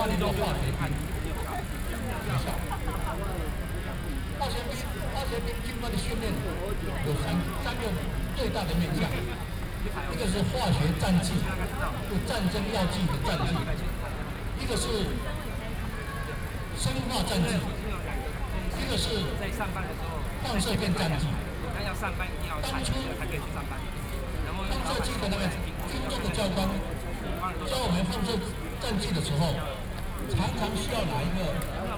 Opposed to nuclear power plant construction, Binaural recordings, Sony PCM D50 + Soundman OKM II